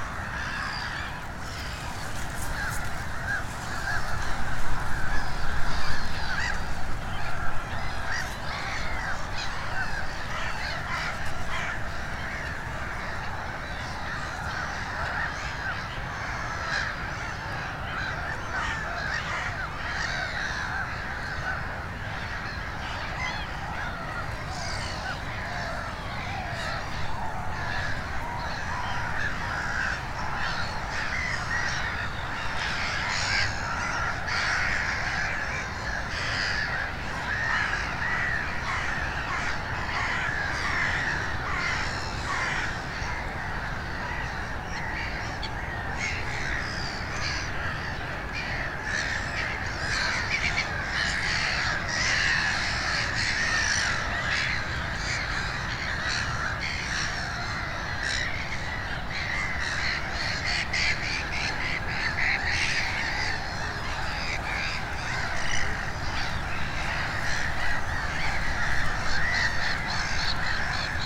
Utena, Lithuania, gulls colony
The local dam was lowered for repair works. New island appeared from the waters and is occupied by water birds. New soundscape in the known place.